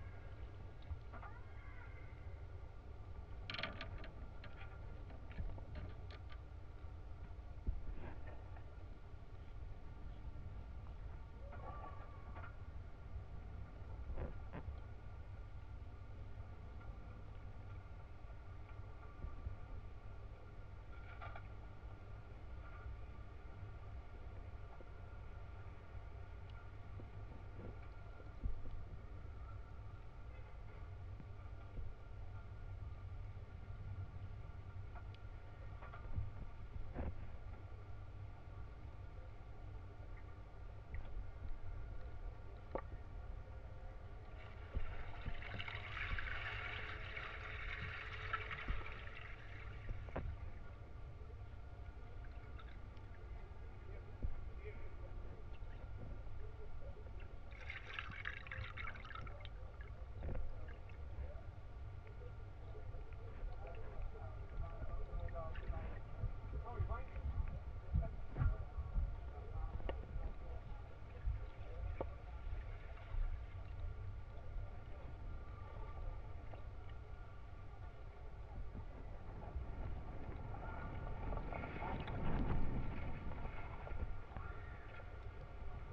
Suezkade, Den Haag - hydrophone rec from a little platform
Mic/Recorder: Aquarian H2A / Fostex FR-2LE